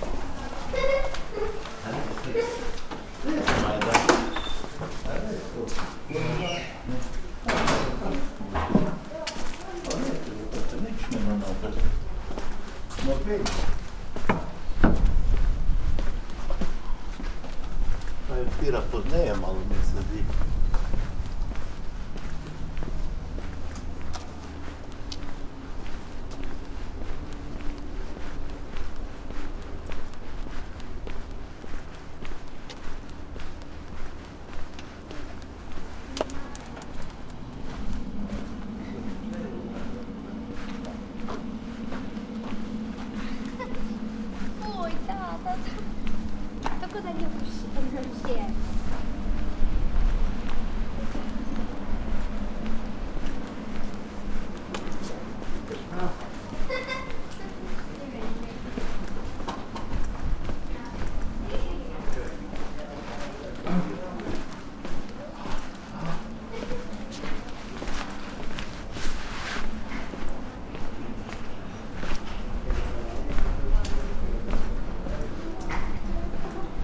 Koper, city streets - walking through stony streets
grey and cold spring monday, walking the streets ... passers-by wearing audible clothing